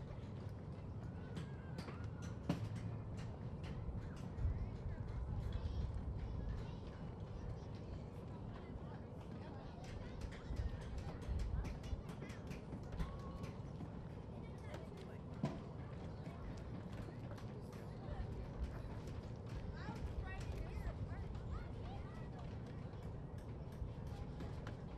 Berkeley Marina - adventure park for kids
CA, USA, 16 November 2010